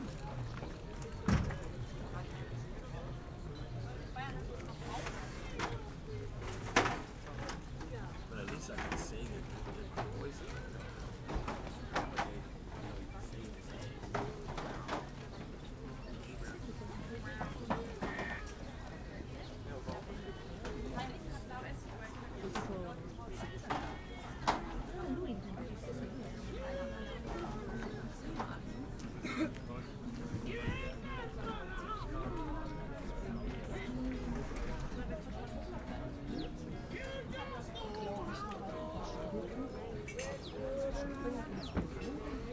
Soundscapes in the pandemic: Maybachufer market, entrance area
(Sony PCM D50, Primo EM172)